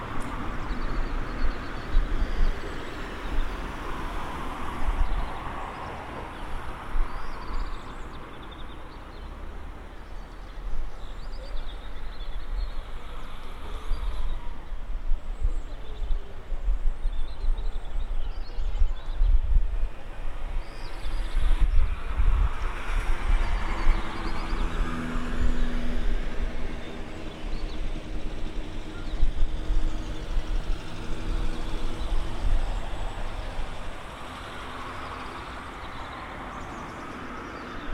Viseu, fifth floor H18.30